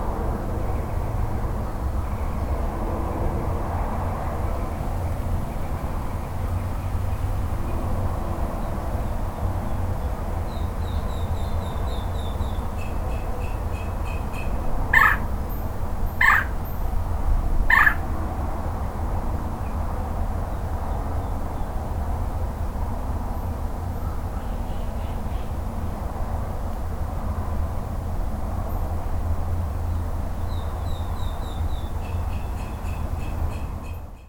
Massage Treatment Room
Birds and other peaceful nature sounds heard through the window at 6 am.